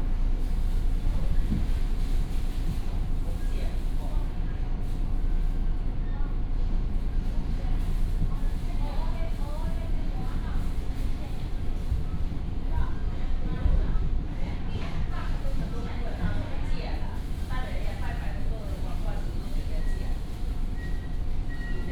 Dadu District, Taichung City - Coastal Line (TRA)
Coastal Line (TRA), from Jhueifen station to Dadu Station
Dadu District, Taichung City, Taiwan, 19 January 2017, ~10:00